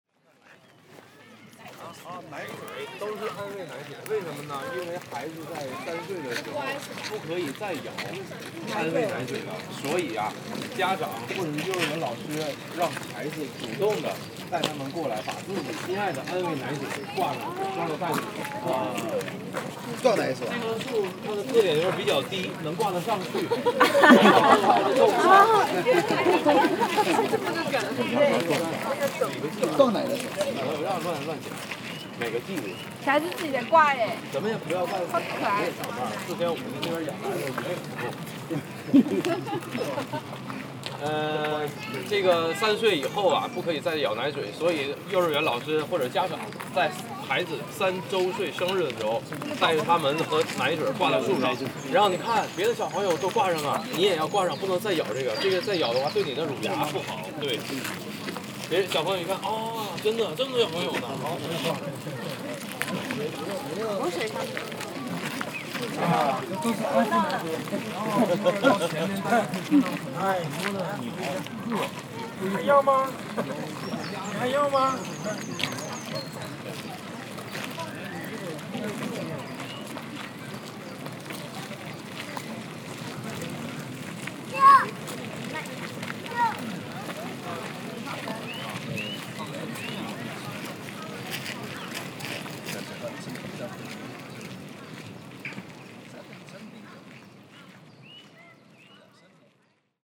Frederiksberg, Denmark - The Suttetræ dummy tree
This is a tree called Suttetræ. It's very common in the Danish culture. This is a special place where 3 year olds give up their dummies on graduating to kindergarten from babyhood at the day nursery. A lot of dummies are hanging on the branches. When we were near the tree, a group of Asian tourists came, having a very quick look, and went to the next spot.